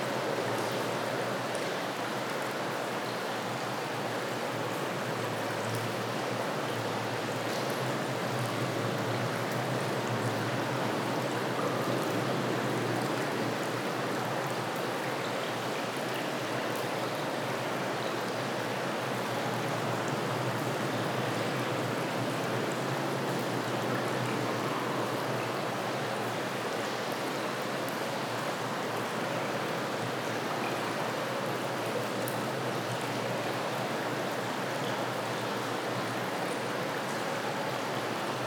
Ponta Do Sol, Portugal - water inside tunnel
water dripping and flowing inside a tunnel, reveberation and resonance, church audio binaurals with zoom h4n
1 December